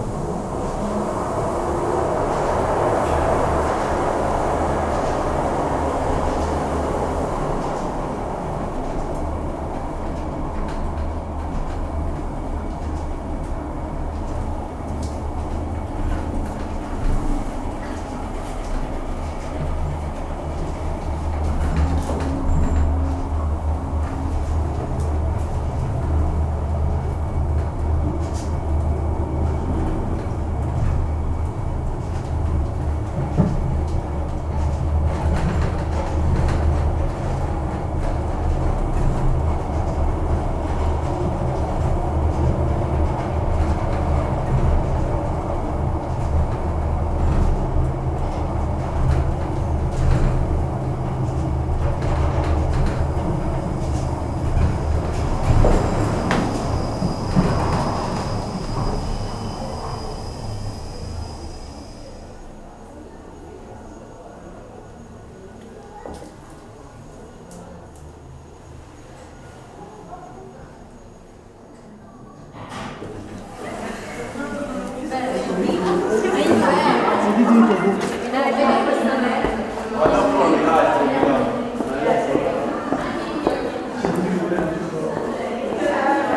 Alone on the funicular getting to the castle. Once arrived, I walk across a group of students and finally walk outside with a view on the valley